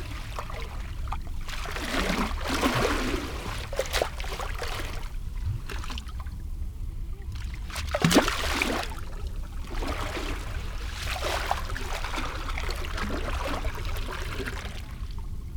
as water is almost everything we are, dynamics of solid and fluid is there somewhere in between all the time